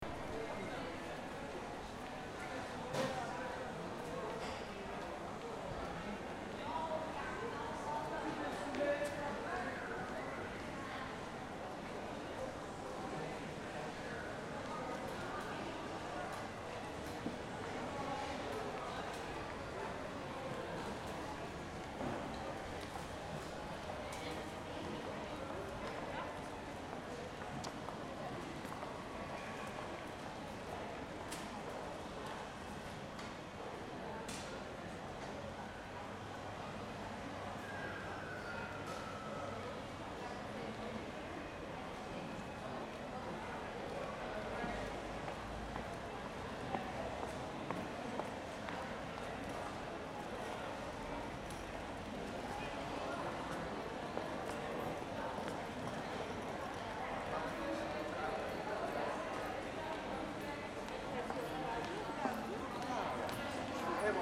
Hoog-Catharijne CS en Leidseveer, Utrecht, Niederlande - entrance "hello city"
the entrance of the shopping mall build in the 1970ies from the main station, recorded next to a plant